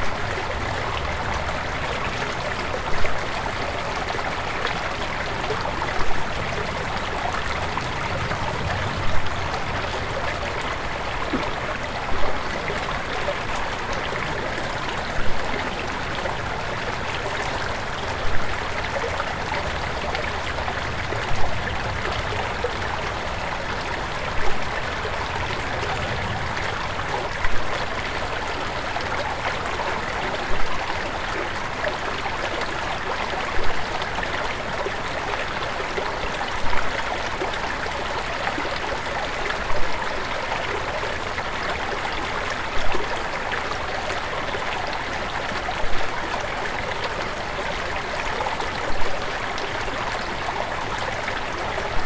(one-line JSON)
{"title": "neoscenes: Medano Creek after the flashflood", "date": "2011-08-25 14:54:00", "latitude": "37.80", "longitude": "-105.51", "altitude": "2568", "timezone": "America/Denver"}